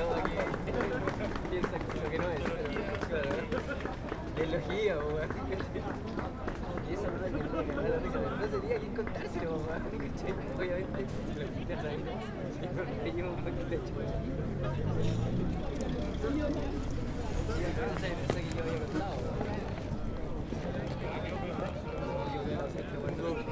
berlin, maybachufer: speakers corner neukölln - the city, the country & me: balkan street band
balkan street band with accordions and brass section
the city, the country & me: april 12, 2011